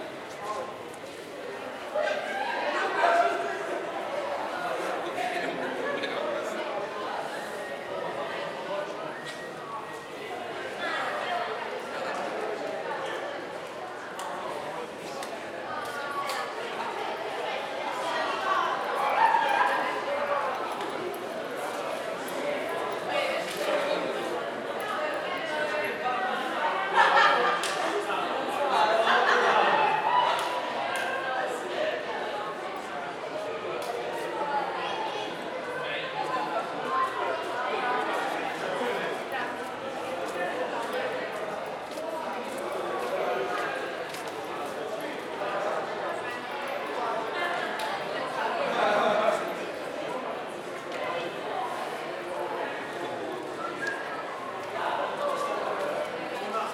L'Aquila, Cantoni Portici - 2017-06-08 10-Portici Quattro Cantoni
Ripresa in nottura
L'Aquila AQ, Italy, 8 June 2017, 23:50